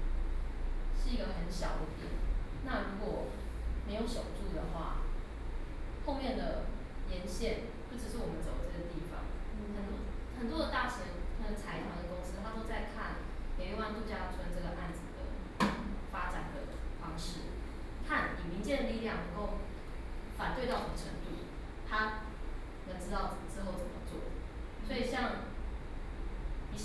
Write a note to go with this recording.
Forum, Share hiking eastern Taiwan environmental changes and problems, Sony PCM D50 + Soundman OKM II